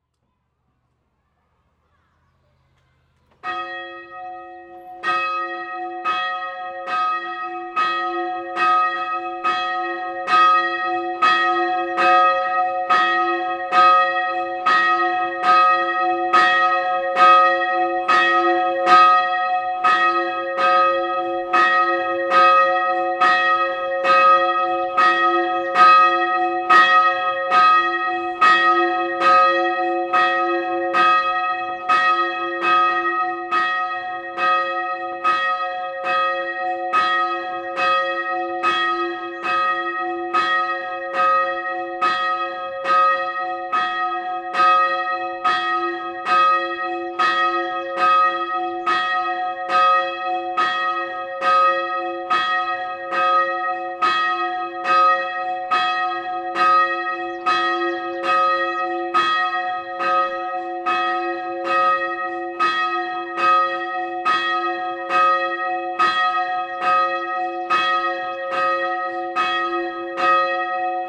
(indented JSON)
{
  "title": "Muzeum Kaszubski Park Etnograficzny im. Teodory i Izydora Gulgowskich we Wdzydzach Kiszewskich, Wdzy - Dzwony kościelne. Church bells.",
  "date": "2014-06-08 15:35:00",
  "description": "Dzwony kościelne na terenie Muzeum Kaszubskiego Parku Etnograficznego. Dźwięki nagrano podczas projektu \"Dźwiękohisotrie. Badania nad pamięcią dźwiękową Kaszubów.",
  "latitude": "54.01",
  "longitude": "17.94",
  "altitude": "143",
  "timezone": "Europe/Warsaw"
}